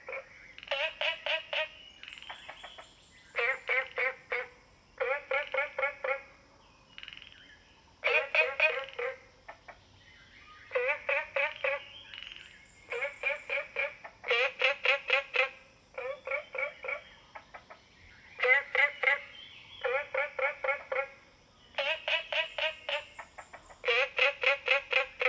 蓮華池藥用植物標本園, Nantou County - Frogs chirping
birds and Insects sounds, Ecological pool, Frogs chirping
Zoom H2n MS+XY
Yuchi Township, 華龍巷43號, 26 April, ~07:00